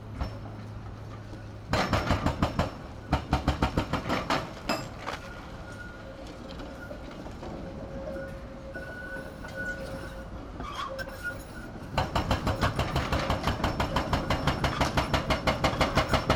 an excavator with a pneumatic hammer crushing a concrete wall. trams rolling nearby.
23 November 2012, ~15:00